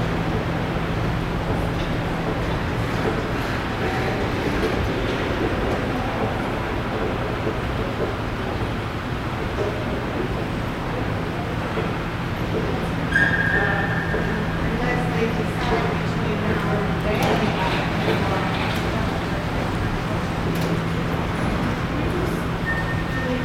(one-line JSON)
{"title": "Calgary +15 Ernst & Young lobby", "description": "escalators and other mechanical noise", "latitude": "51.05", "longitude": "-114.07", "altitude": "1056", "timezone": "Europe/Tallinn"}